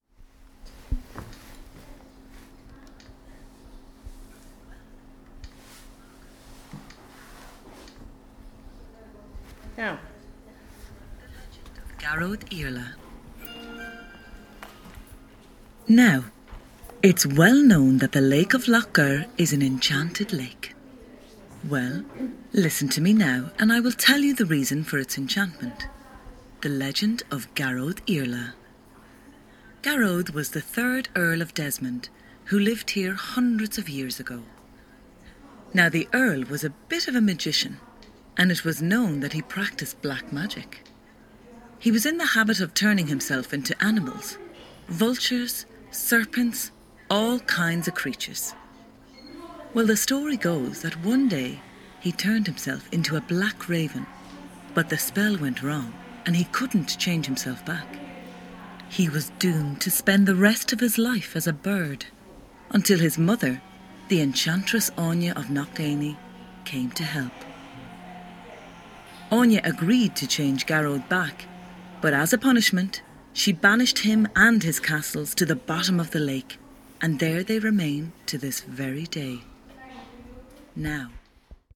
Lough gur, Co. Limerick, Ireland - Lough gur Visitor centre
In the newly refurbished visitor centre, audio guides are available with facts, stories and myths about the Lough Gur area.
County Limerick, Munster, Republic of Ireland